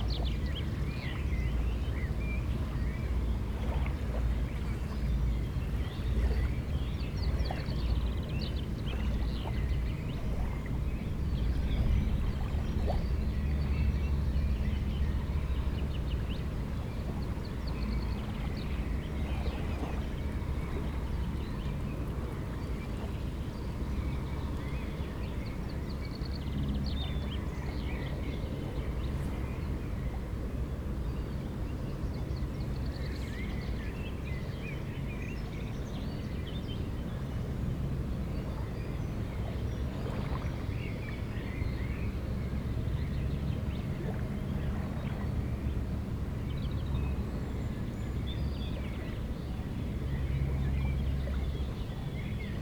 Strandbadweg, Mannheim, Deutschland - Strandbad Ambiente
Strandbad, Rhein, Schiffe, Gänse mit Jungtieren, Wellen, Wind, Vögel, urbane Geräusche
Baden-Württemberg, Deutschland, 7 June